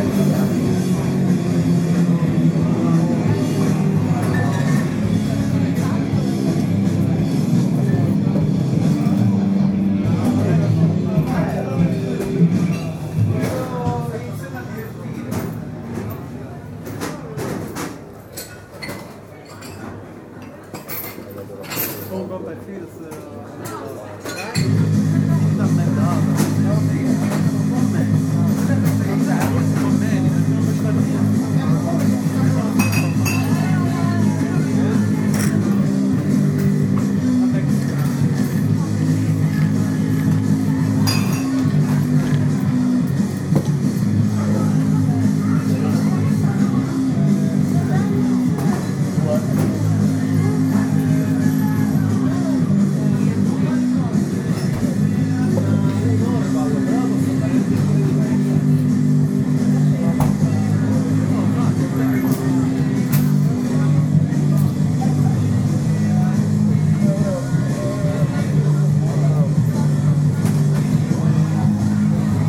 DRUNKS - Palermo night
Drunks - Palermo, una sera qualunque edirolR-09HR (ROMANSOUND)
Palermo, Italy, December 2010